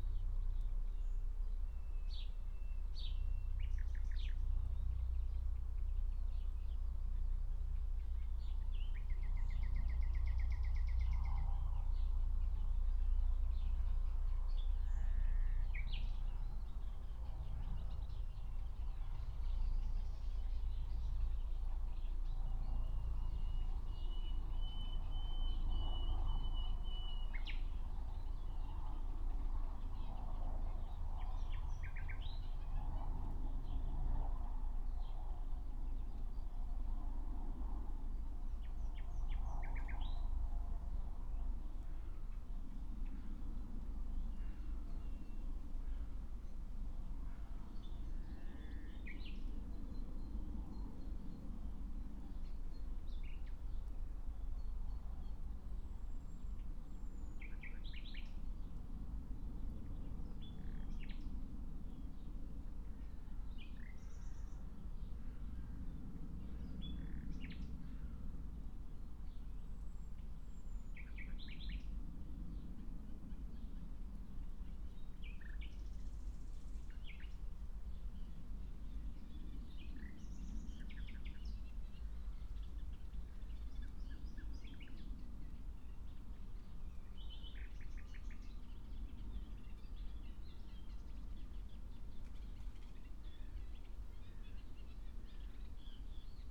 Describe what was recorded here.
10:00 Berlin, Tempelhofer Feld